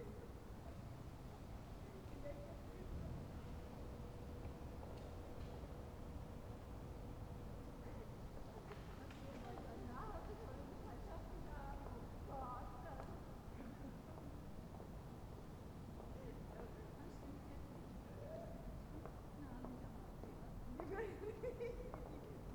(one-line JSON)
{"title": "Berlin: Vermessungspunkt Friedel- / Pflügerstraße - Klangvermessung Kreuzkölln ::: 18.09.2010 ::: 02:19", "date": "2010-09-18 02:19:00", "latitude": "52.49", "longitude": "13.43", "altitude": "40", "timezone": "Europe/Berlin"}